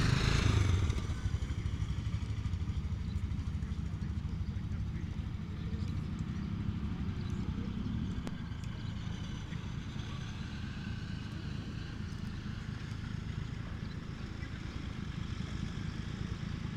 Dubingiai, Lithuania, crossroads (quarantine days)
the crossroad of little Lithuanian historical town...life is going on.
Utenos apskritis, Lietuva, 23 May 2020, 16:05